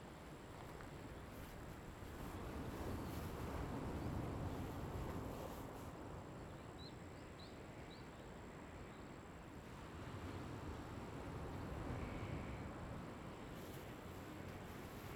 Traffic Sound, On the coast, Sound of the waves
Zoom H2n MS +XY
Taitung County, Taiwan, October 2014